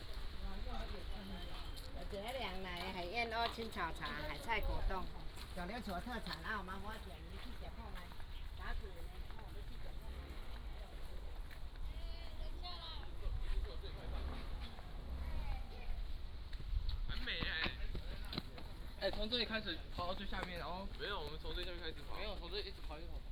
杉福生態區, Hsiao Liouciou Island - Abandoned military sites
walking in the Abandoned military sites
Liouciou Township, 肚仔坪路2號, 1 November 2014